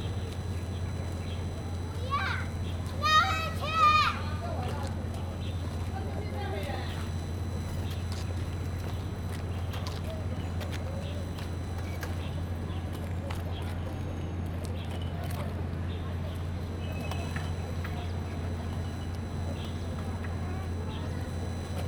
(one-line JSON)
{"title": "Jiaxing Park, Da’an Dist., Taipei City - in the Park", "date": "2015-07-30 17:22:00", "description": "The elderly and children, Bird calls, Traffic Sound, After the thunderstorm\nZoom H2n MS+XY", "latitude": "25.02", "longitude": "121.55", "altitude": "16", "timezone": "Asia/Taipei"}